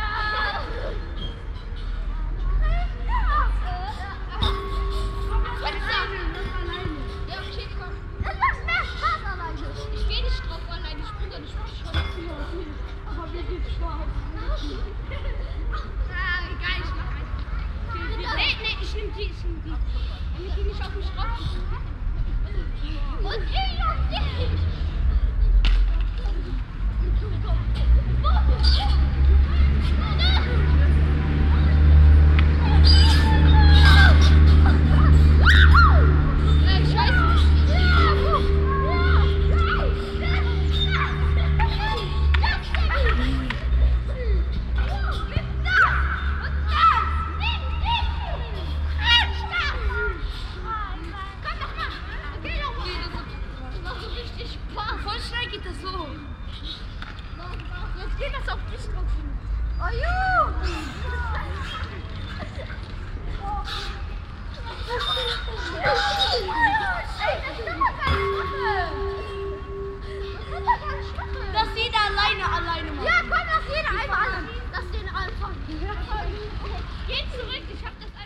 nachmittags kinderstimmen am spielplatz
soundmap nrw:
social ambiences, topographic fieldrecordings
weddinger strasse, city spielplatz